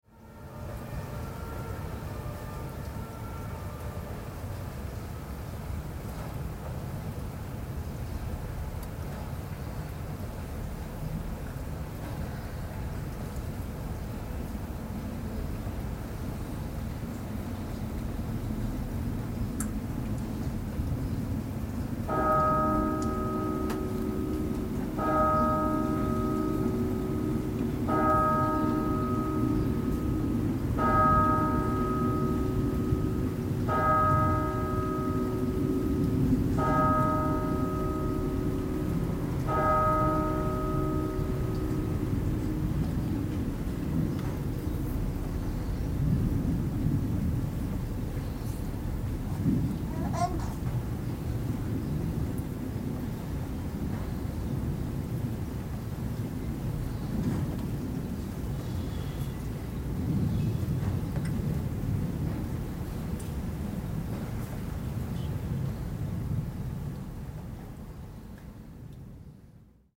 koeln, window, descending thunder & rain - koeln, st. severin, church bell
after a thunderstorm.
recorded june 22nd, 2008.
project: "hasenbrot - a private sound diary"